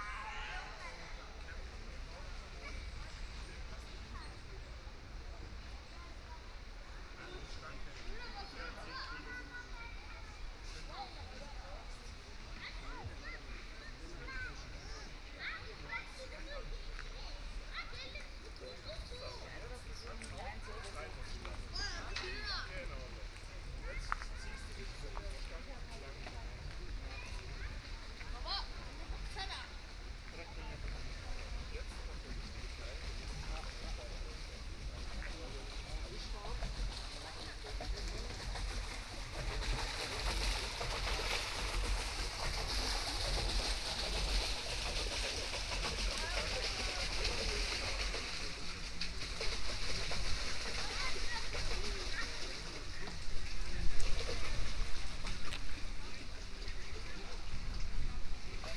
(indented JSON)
{
  "title": "Neckar in Tübingen - late summer activities on the Neckar river in Tübingen",
  "date": "2019-09-15 17:25:00",
  "description": "Spätsommeraktivitäten auf dem Neckar in Tübingen: Stocherkähne, Tretboote, Stehpaddler, Ruderboote. Kleine (aber laute) Leichtflugzeuge.\nLate summer activities on the Neckar river in Tübingen: Punting boats, pedal boats, paddlers, rowing boats. Small (but loud) light aircraft.",
  "latitude": "48.52",
  "longitude": "9.05",
  "altitude": "328",
  "timezone": "Europe/Berlin"
}